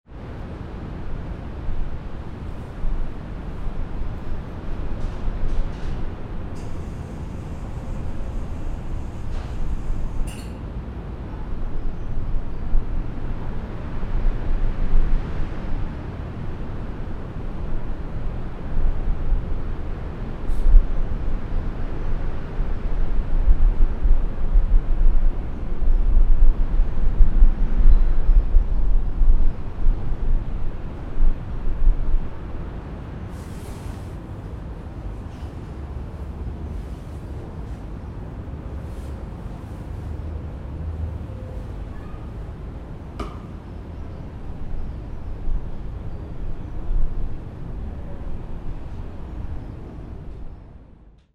ratingen, kirche peter + paul, dicke märch - ratingen, kirche peter + paul, turmatmo
atmo aufgenommen im kirchturm, mittags
soundmap nrw:
social ambiences/ listen to the people - in & outdoor nearfield recordings